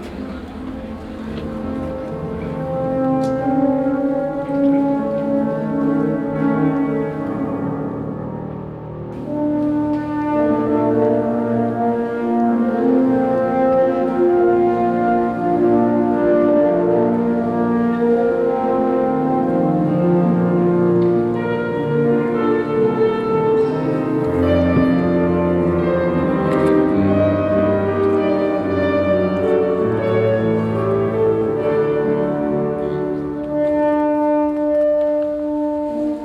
In der evanglischen Marktkirche zur samstäglichen nachmittags Vesper. Der Klang eines musikalischen Duets im Kirchenraum - Bünkerücken und leise Schritte.
Inside the evangelian markez church at a saturday vesper . The sound of two musicians playing inside the church.
Projekt - Stadtklang//: Hörorte - topographic field recordings and social ambiences
26 April 2014, 16:00